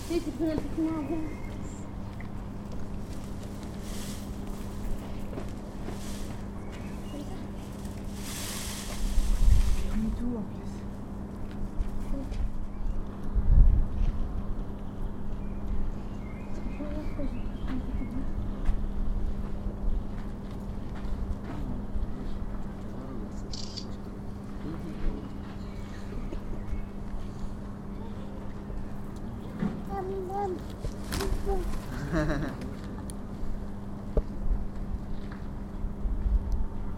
Peacock in Vojanovy sady
Sound of the peacock wings, scratching the ground at upper terrace of Vojanovy sady. In the back of the garden there is a fountain, desolated stage and a few peacocks nesting in the vines.
Prague, Czech Republic, 1 April